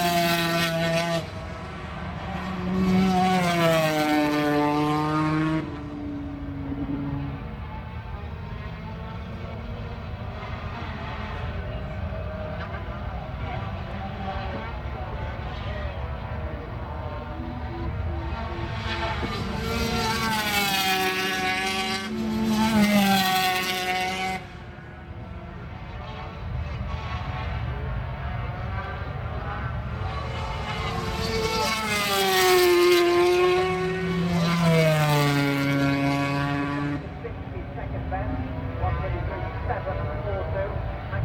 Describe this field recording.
500cc motorcycle warm up ... Starkeys ... Donington Park ... one point stereo mic to minidisk ...